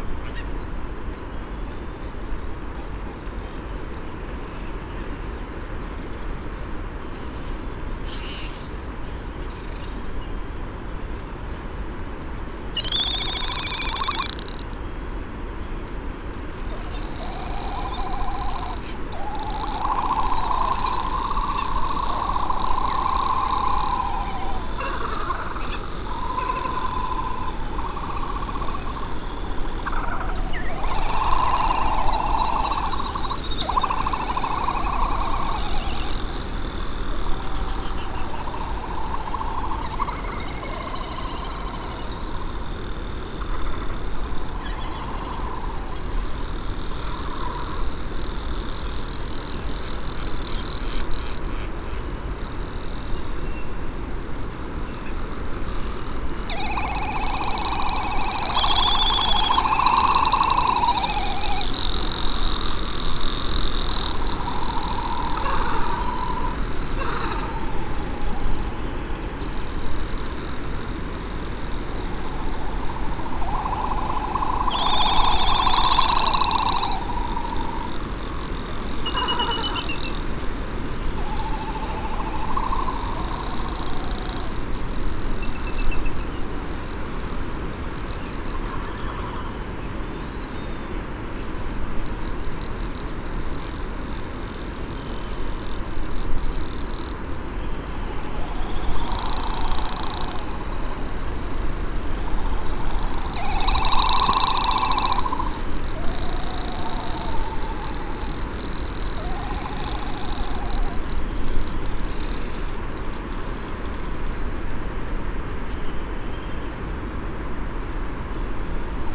United States Minor Outlying Islands - great frigate bird ...
Great frigate bird calls and 'song' ... Sand Island ... Midway Atoll ... bird calls ... great frigate bird ... laysan albatross ... red -tailed tropic bird ... white tern ... canary ... black noddy ... parabolic ... much buffeting ... males make the ululating and ratchet like sounds ... upto 20 birds ... males and females ... parked in iron wood trees ...